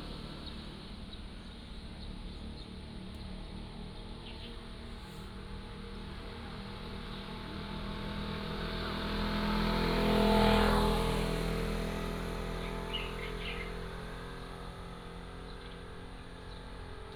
Fuxing Rd., Liuqiu Township - Birds singing
Birds singing, next to school